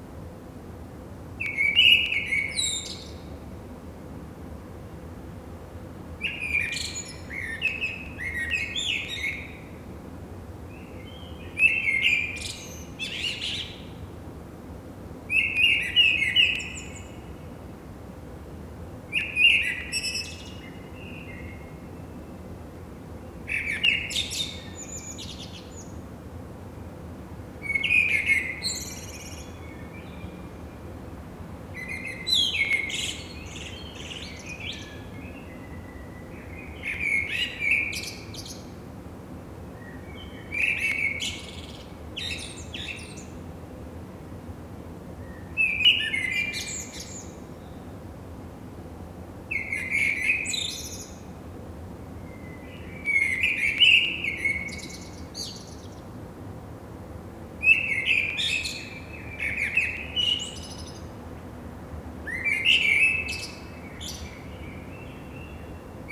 I lived here for 15 yearsand one morning i woke up with this beautifull blackbird from the tree behind the house
Noord-Holland, Nederland, 1 May 1999